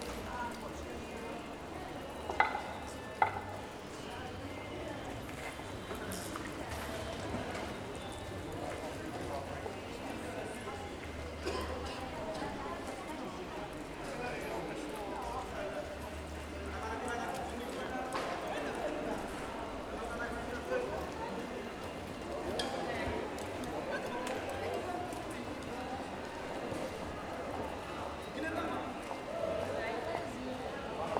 This recording is one of a series of recording mapping the changing soundscape of Saint-Denis (Recorded with the internal microphones of a Tascam DR-40).